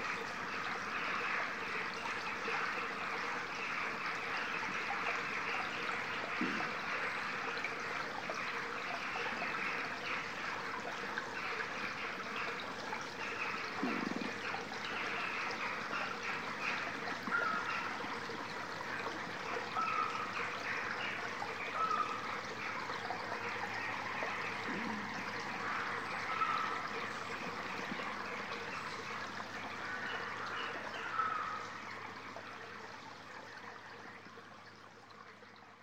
Tyneham, UK - Tyneham stream and woodland sounds
Recorded on a little bridge over a tiny stream in a small copse on the way down to Warbarrow beach. Sony M10
Wareham, UK, 29 December 2016